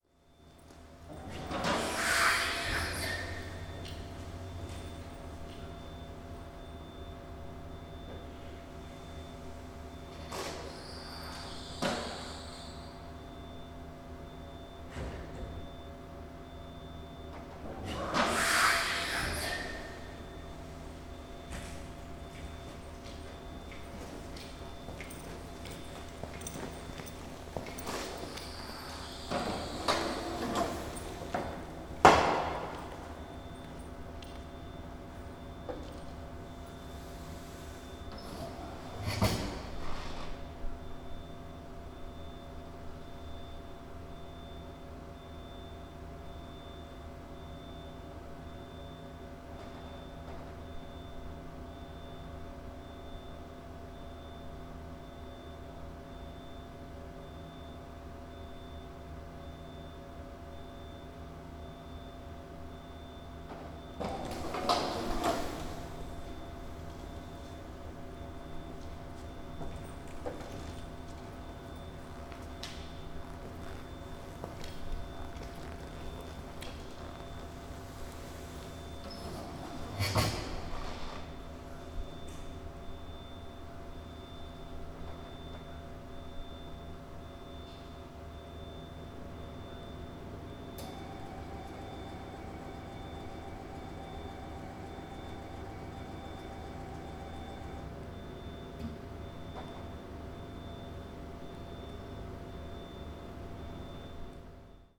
Eingangshalle, Reisender, technische Geräusche
Der Bahnhof Limburg Süd liegt in der Nähe der mittelhessischen 36.000-Einwohner-Kreisstadt Limburg auf dem Eschhöfer Feld-Gebiet des Limburger Stadtteils Eschhofen beim Streckenkilometer 110,5 der Schnellfahrstrecke Köln–Rhein/Main [...]Durchfahrende ICE können den Bahnhof darauf ohne Geschwindigkeitsverminderung mit bis zu 300 km/h passieren.
entry hall, traveller, technical sounds
The station is served by regular InterCityExpress services. Due to Limburg's relatively small size, passenger traffic is rather low, although commuters to Frankfurt am Main value the fast connections. Some 2,500 people use the station daily. The station has four tracks in total, of which two are equipped with a platform and two allow through trains to pass the station unobstructed at speeds of up to 300 km/h. Track one's platform, used by trains to Frankfurt, Mainz and Wiesbaden, also houses the ticket office.